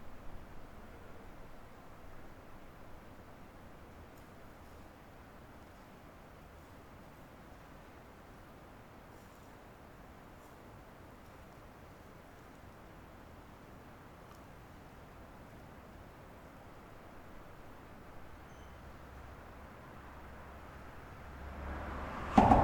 Garrison, NY, USA - Under the Route 9D Bridge
Sounds of traffic under the Route 9D bridge.